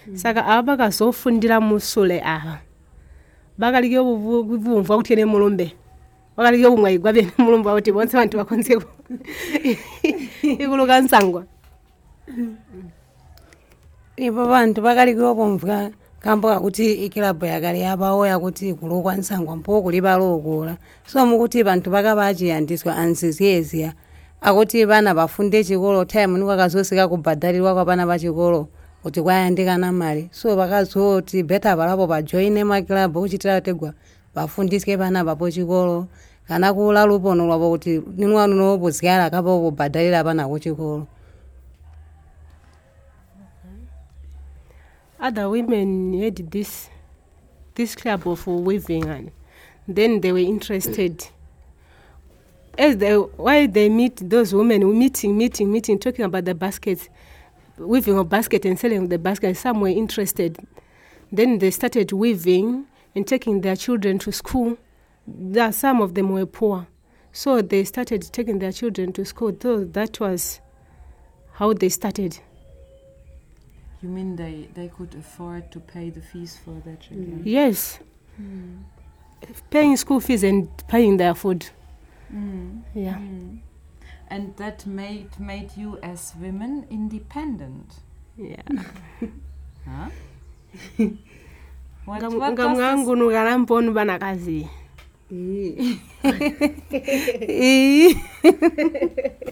Binga Craft Centre, Binga, Zimbabwe - Barbara Mudimba - I'm a producer...
We are together with Barbara Mudimba and the sales assistant, Viola Mwembe at the Craft Centre in Binga. Viola translates from the ChiTonga. Barbara is a woman from Kariangwe, a village in the Binga district. She started weaving baskets as a means of survival, providing for herself and her family. Here, she tells us about what it means to her being a creative producer.
Barbara used to belong to a club of women basket-weavers in Kariangwe.